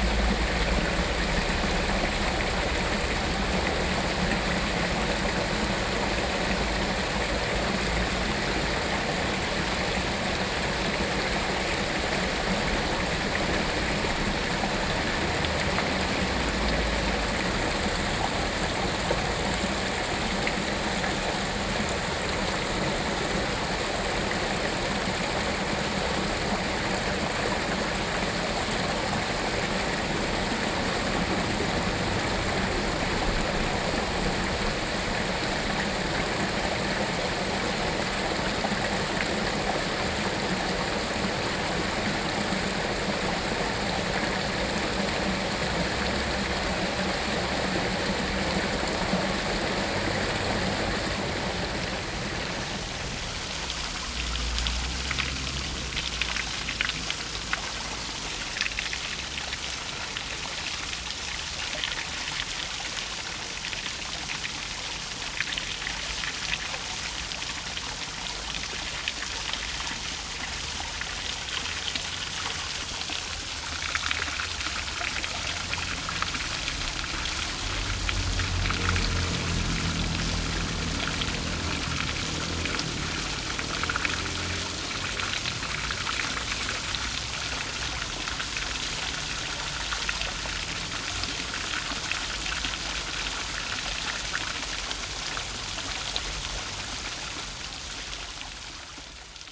Tianfu Rd., Sanxia Dist. - The sound of water
The sound of water
Sony PCM D50